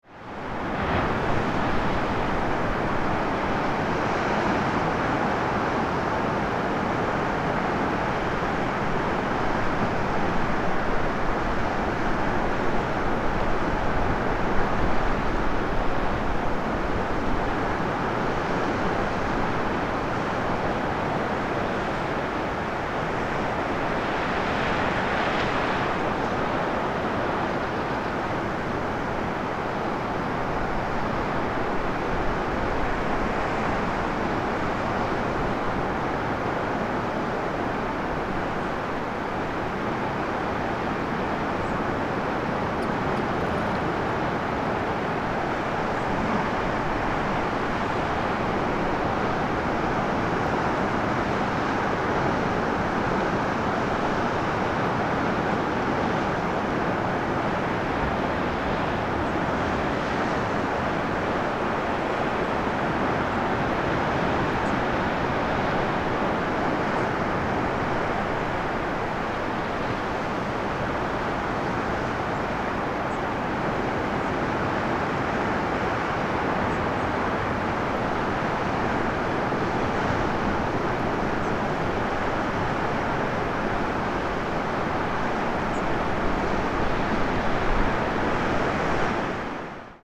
stromboli, sciara del fuego - sound of the sea
sound of the sea near the sciara del fuego, street of fire, where the lava usually goes down after eruptions